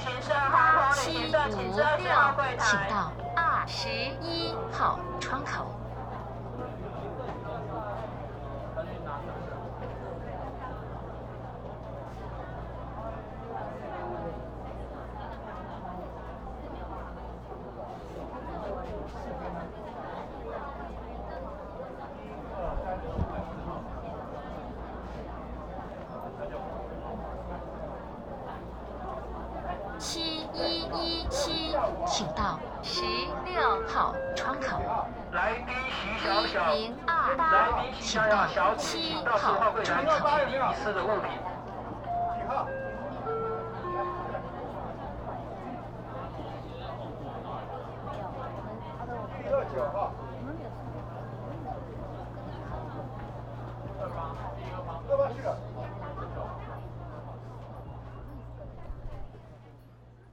Ministry of Foreign Affairs, Taipei city - Waiting for passport
Waiting for passport, Counter broadcasting, Sony PCM D50 + Soundman OKM II